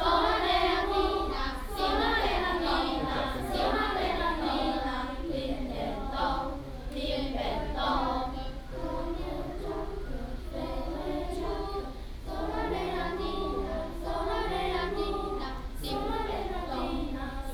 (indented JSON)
{
  "title": "埔里國小, Puli Township - Vocal exercises",
  "date": "2016-05-19 08:26:00",
  "description": "Students Choir, Vocal exercises",
  "latitude": "23.97",
  "longitude": "120.97",
  "altitude": "450",
  "timezone": "Asia/Taipei"
}